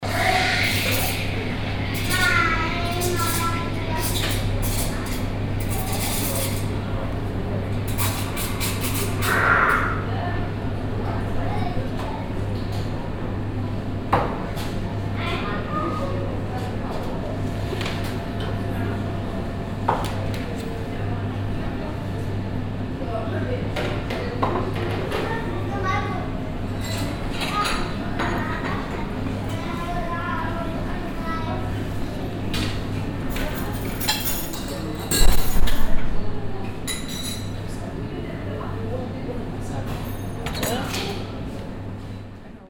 essen, porscheplatz, cafe
Inside a cafe, bakery. The sound of the coffee machine, dishes and people - recorded in the late afternoon.
Projekt - Stadtklang//: Hörorte - topographic field recordings and social ambiences